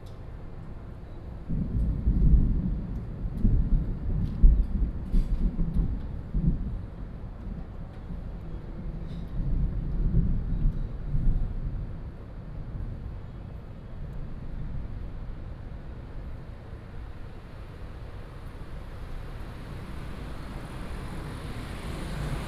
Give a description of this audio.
Next to the restaurant, Sound of thunder, traffic sound